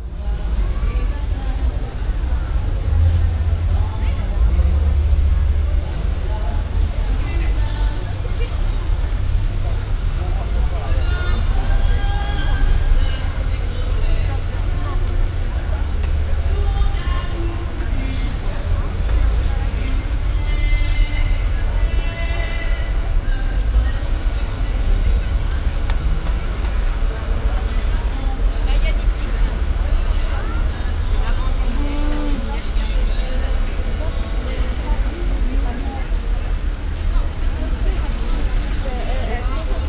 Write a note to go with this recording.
Ont the weekly market of the village. A big crowd of visitors strolling around the market stalls.Some music coming from the square in the background. international village scapes - topographic field recordings and social ambiences